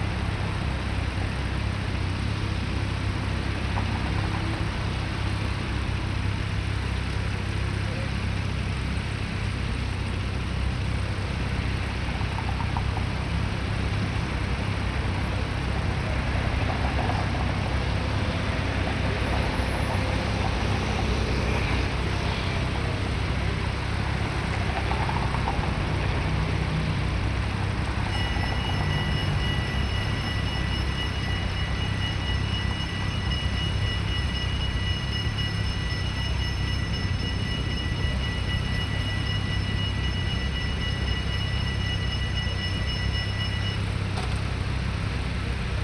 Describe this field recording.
Traffic jams, trams, cars, motorcycles, people. Very busy area in the city, especially on a Friday afternoon.